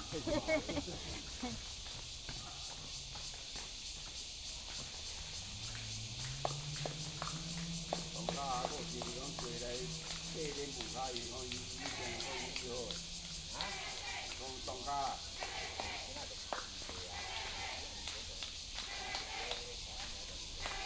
Fuyang Eco Park, Da'an District, Taipei City - Morning in the park
Morning in the park, Many older people in the park, Bird calls, Cicadas cry Frogs chirping
Da’an District, 台北聯絡線, July 2015